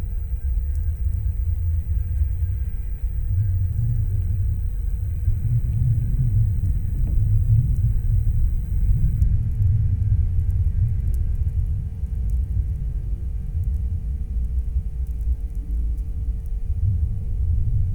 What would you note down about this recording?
contact microphones on the barded wire guarding some warm water pipes